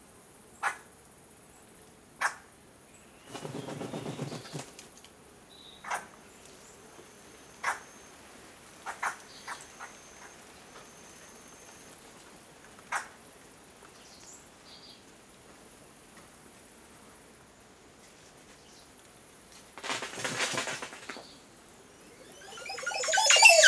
Telaya, Veracruz, Mexico - Montezuma oropendola bird call
Montezuma Oropendola (Psarocolius montezuma)singing in a tree, in the middle of a banana field. Recorded close to the village of Paso de Telaya in the state of Veracruz.
ORTF microphone setup, Schoeps CCM4 x 2 in a Cinela windscreen
Sound Devices MixPre
Sound Ref: MX-200402-03
GPS: 20.156221, -96.873653
Recorded during a residency at Casa Proal